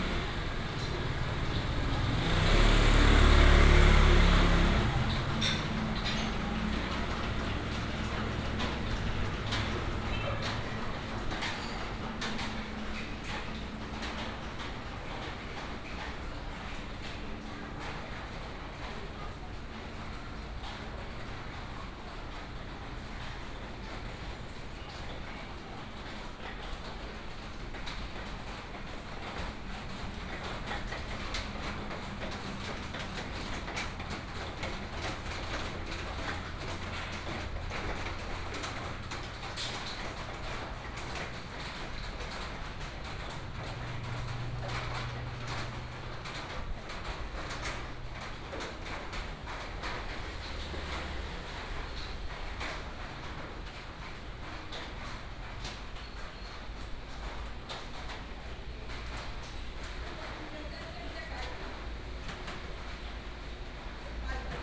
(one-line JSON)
{"title": "新樂集中商場, Yancheng Dist., Kaohsiung City - Old shopping street", "date": "2018-05-09 09:02:00", "description": "Walking in the alley, Old shopping street, Traffic sound, Rain sound", "latitude": "22.63", "longitude": "120.28", "altitude": "9", "timezone": "Asia/Taipei"}